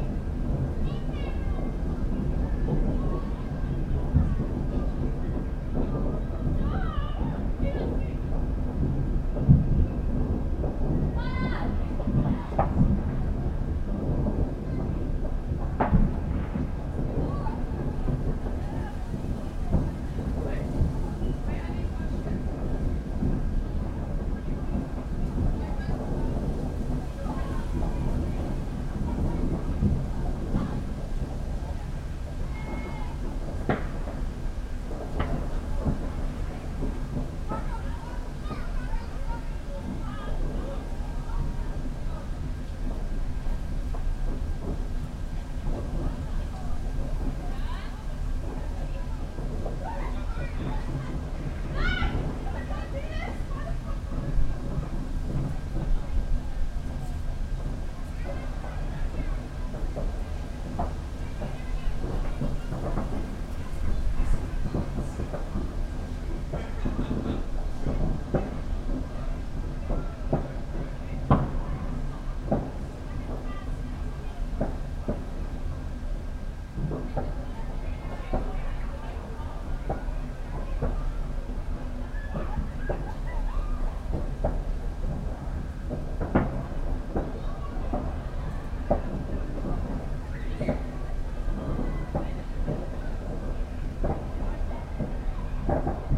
Ladlands, London, UK - Guy Fawkes Balcony
Recorded with a pair of DPA 4060s and a Maratz PMD 661